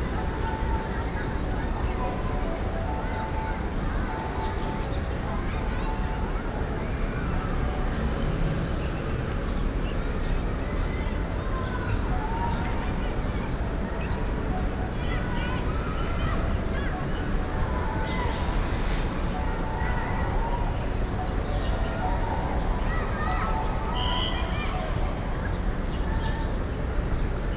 In the Park, Distant sound of school, Zoom H4n+ Soundman OKM II
New Taipei City, Taiwan, 28 June 2012, ~16:00